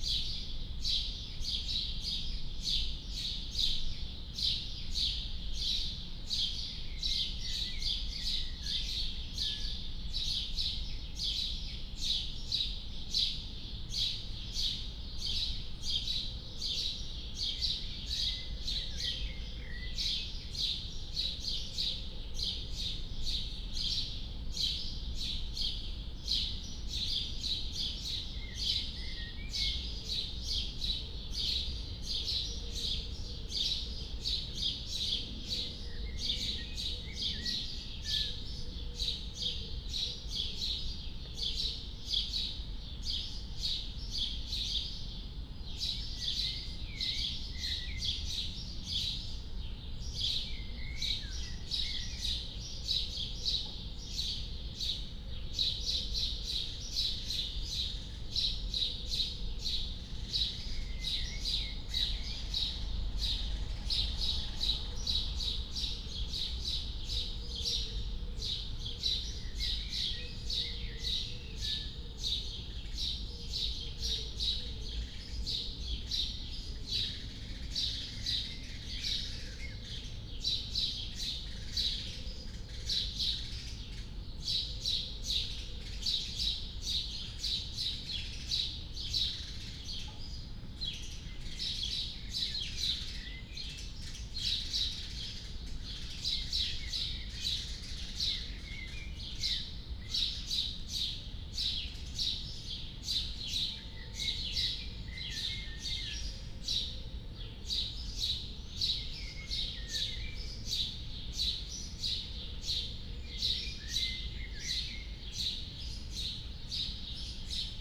Berlin Bürknerstr., backyard window - early morning ambience
atmoshere in backyard, early morning
(Sony PCM D50, Primo EM172)
Berlin, Germany, 20 May 2019, 05:15